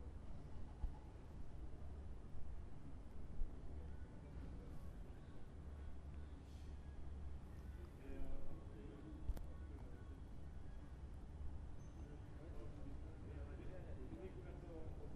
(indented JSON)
{
  "title": "Craighead Avenue Park, Glasgow, Glasgow City, UK - MONS by night",
  "date": "2014-09-07 01:30:00",
  "description": "Sur les hauteur de Mons (BE) entre Sainte Waudru et le beffro, vers 1h du matin, psté sur un bac public.",
  "latitude": "55.88",
  "longitude": "-4.19",
  "altitude": "73",
  "timezone": "Europe/London"
}